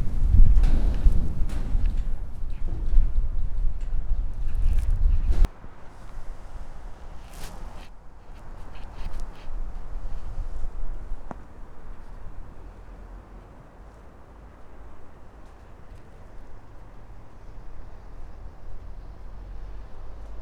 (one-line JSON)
{"title": "Lithuania, Utena, wind and metallic billboard", "date": "2012-12-15 20:00:00", "description": "windshears on a big metallic billboard", "latitude": "55.51", "longitude": "25.60", "altitude": "108", "timezone": "Europe/Vilnius"}